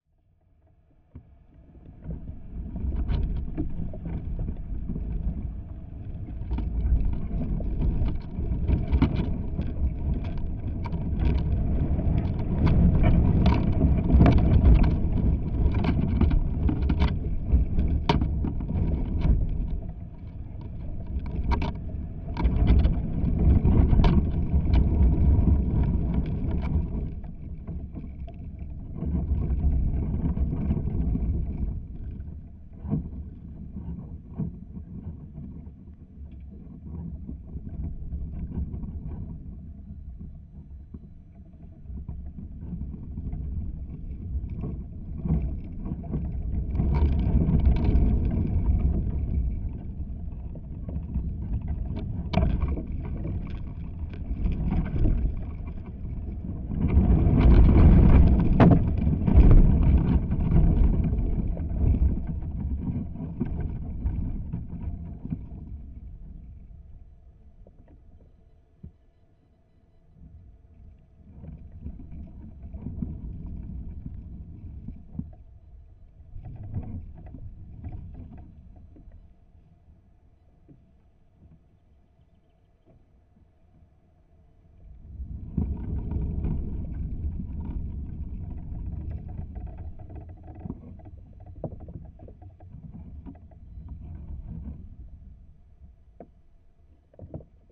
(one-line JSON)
{"title": "Utena, Lithuania, abandoned house", "date": "2018-04-11 10:20:00", "description": "contact mics on the roof of abandoned house", "latitude": "55.52", "longitude": "25.58", "altitude": "100", "timezone": "Europe/Vilnius"}